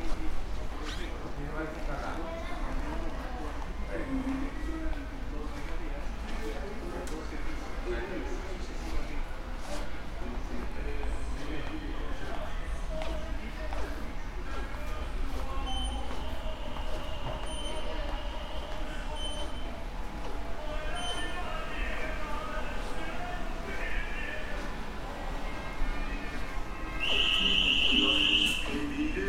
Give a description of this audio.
Walking inside The Home Depot, Leon Guanajuato, by all the sections of the store. I made this recording on September 13th, 2021, at 1:33 p.m. I used a Tascam DR-05X with its built-in microphones and a Tascam WS-11 windshield. Original Recording: Type: Stereo, Caminando dentro de The Home Depot, León Guanajuato, por todas las secciones de la tienda. Esta grabación la hice el 13 de septiembre de 2021 a las 13:33 horas. Usé un Tascam DR-05X con sus micrófonos incorporados y un parabrisas Tascam WS-11.